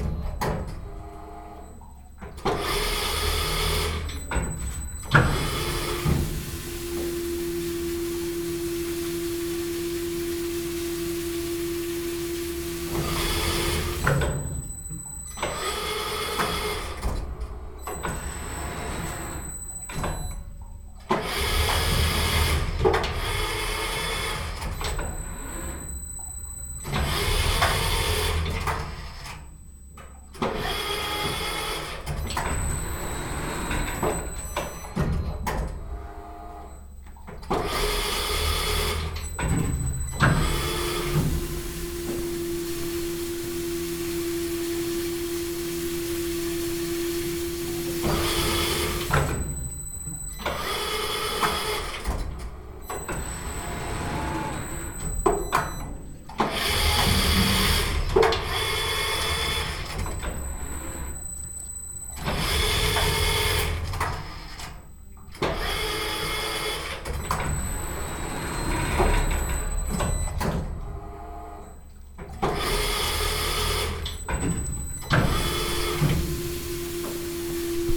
Franclens, France - Cheese making
In the "fromagerie Gojon", people are making traditional cheese called Comté Pyrimont. It's a 45 kg cheese, which needs 18 to 24 months refinement. This cheese is excellent and has a very stong odour. Here, a machine is turning each cheese, brush it with water and salt, and replace it back to the good place.